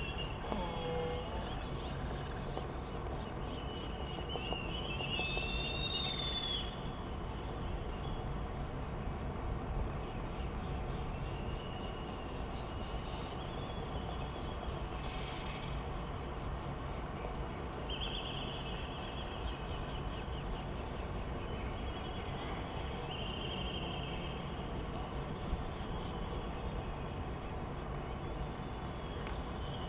Sand Island ... Midway Atoll ... soundscape ...laysan albatross ... white tern ... black noddy ... bonin petrel ... Sony ECM 959 one point stereo mic to Sony Minidisk ... background noise...
United States, 25 December 1997